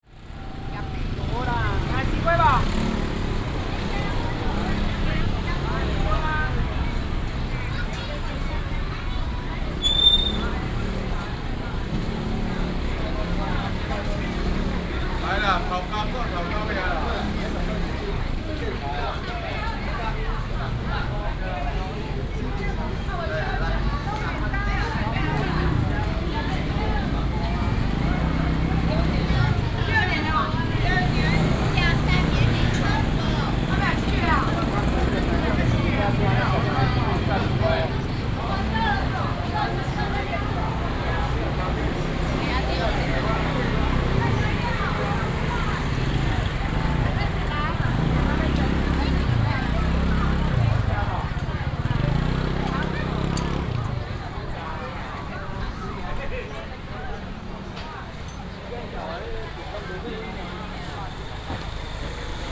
Huamei Market, Xitun Dist., Taichung City - walking in the Evening market
walking in the Evening market, Traffic sound